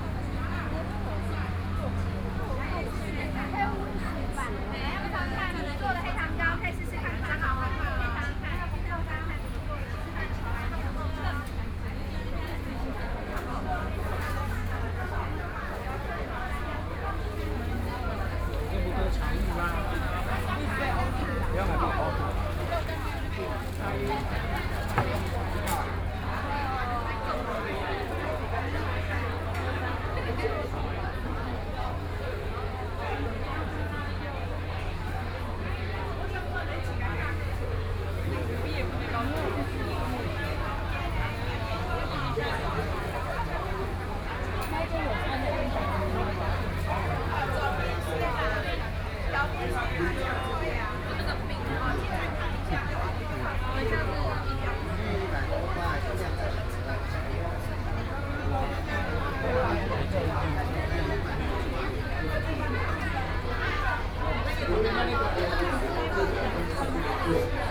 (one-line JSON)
{"title": "Taipei City Hakka Cultural Park - soundwalk", "date": "2013-10-19 16:01:00", "description": "Yimin Festival, Fair, Binaural recordings, Sony PCM D50 + Soundman OKM II", "latitude": "25.02", "longitude": "121.53", "altitude": "12", "timezone": "Asia/Taipei"}